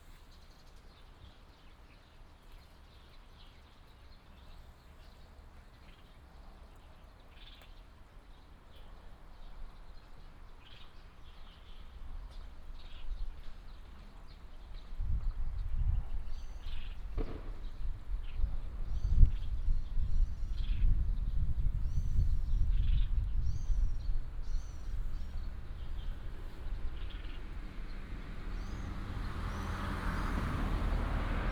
Daxi, Taimali Township, Taitung County - Beside the school
Beside the school, Construction sound, Traffic sound, Bird cry
Binaural recordings, Sony PCM D100+ Soundman OKM II